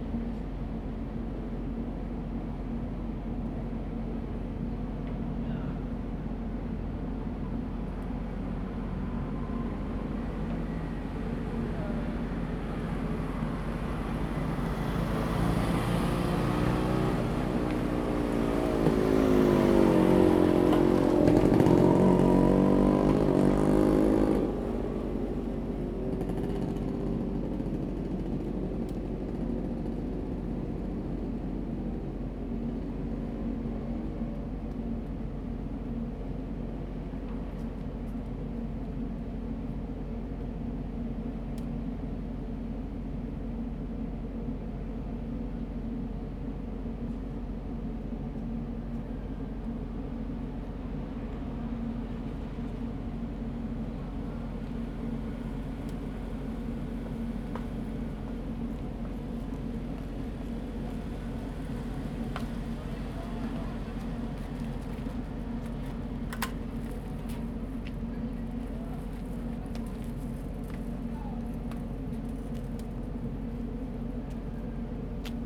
In the fishing port, Traffic Sound
Zoom H2n MS +XY